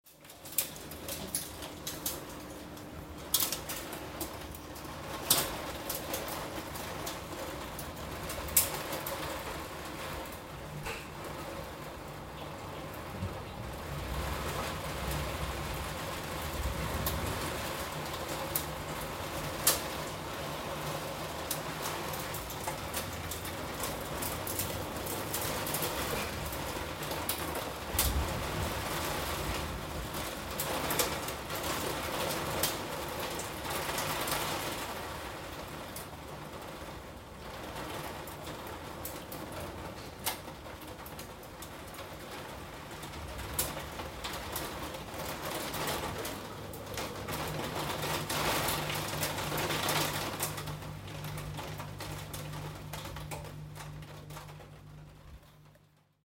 koeln, rain in cullis - koeln, hail on roof-light
hail during a thunderstorm.
recorded june 22nd, 2008.
project: "hasenbrot - a private sound diary"
Cologne, Germany